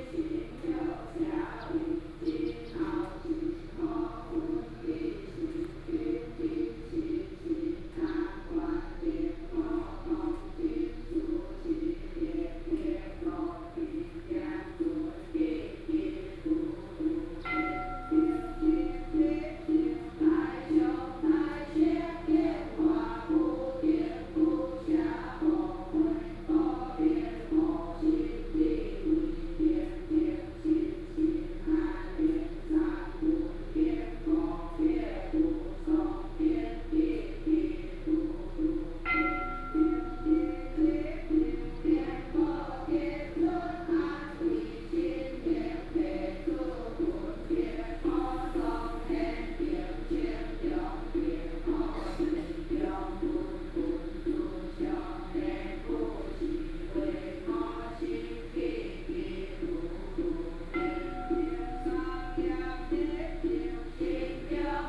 {"title": "城隍廟, Chenggong St. - In the temple", "date": "2014-08-29 05:16:00", "description": "Morning in front of the temple\nBinaural recordings", "latitude": "23.97", "longitude": "121.61", "altitude": "12", "timezone": "Asia/Taipei"}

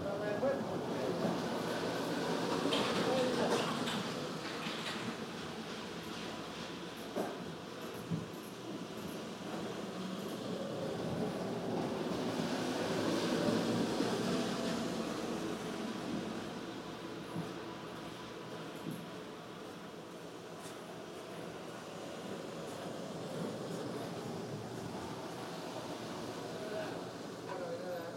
{"title": "winter works", "date": "2011-01-19 13:55:00", "description": "Soundscape of an office windowsill. Shovellers of snow work on the roof to get it down before it falls down on someone. A man down on the street whistles when a pedestrian is passing by and the shovellers get a break. The work in the office goes on simultaneously.", "latitude": "58.38", "longitude": "26.71", "altitude": "71", "timezone": "Europe/Tallinn"}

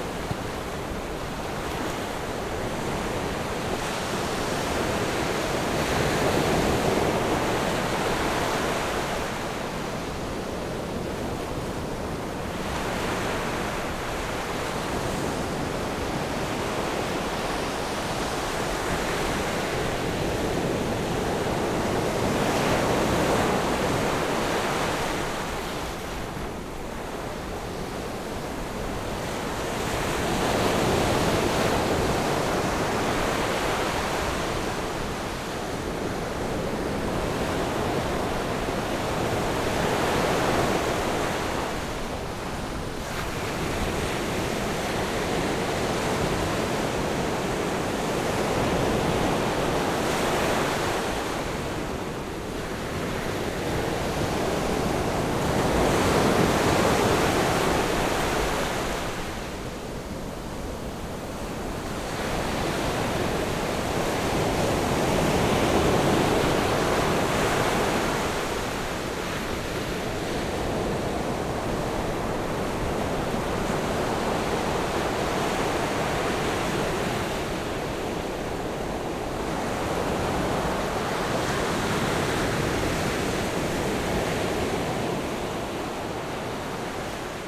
{"title": "壯圍鄉東港村, Yilan County - Sound of the waves", "date": "2014-07-26 13:18:00", "description": "Sound of the waves, In the beach\nZoom H6 MS+ Rode NT4", "latitude": "24.72", "longitude": "121.83", "timezone": "Asia/Taipei"}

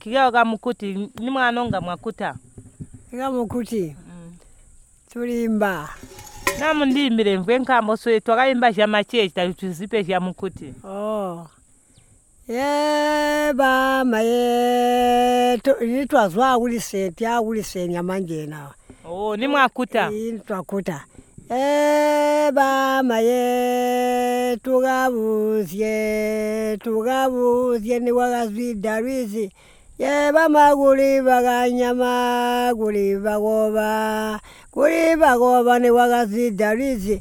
{"title": "Manjolo, Binga, Zimbabwe - Banene, how did you use to cook Mutili...", "date": "2016-10-26 12:05:00", "description": "Duve Mufuari, an elder of Jumbue village, responds to Margaret’s questions about bush fruits and how they used to cook and prepare them. She also sings a song.\na recording from the radio project \"Women documenting women stories\" with Zubo Trust, a women’s organization in Binga Zimbabwe bringing women together for self-empowerment.", "latitude": "-17.76", "longitude": "27.39", "altitude": "602", "timezone": "Africa/Harare"}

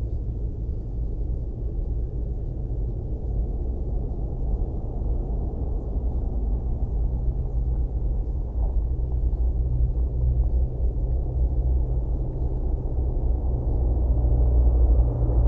{"title": "Utena, Lithuania, inside long pipe", "date": "2022-03-08 17:20:00", "description": "Some building works. Long, about 50 m pipe lying at the side of a road. Drones and resonances recorded with a shotgun microphone inside the pipe.", "latitude": "55.51", "longitude": "25.60", "altitude": "104", "timezone": "Europe/Vilnius"}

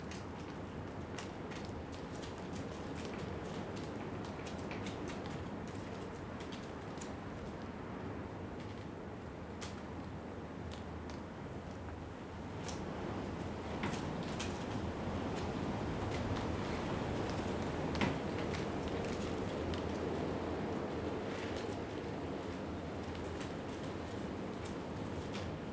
{"title": "Ackworth, West Yorkshire, UK - Hiding from the wind", "date": "2015-01-15 14:42:00", "description": "Sheltering from the wind in a storage container, you can hear the wind hitting against the side of the container, strips of plastic whipping around in the wind, and sometimes the sound of dry grass.\n(Zoom H4n)", "latitude": "53.66", "longitude": "-1.32", "altitude": "61", "timezone": "Europe/London"}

